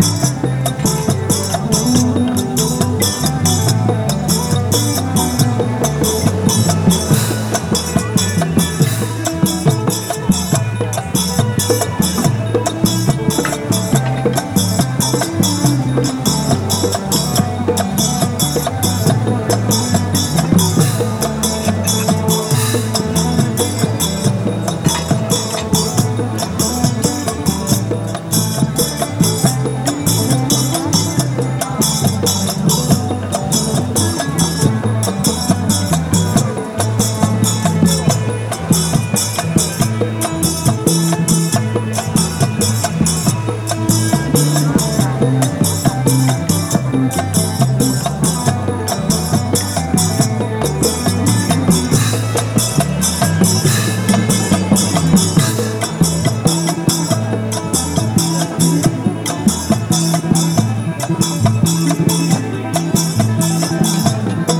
Khuekkhak, Takua Pa District, Phang-nga, Thailand - Great live band at Sarojin with dancers
binaural recording with Olympus
Chang Wat Phang-nga, Thailand, 8 March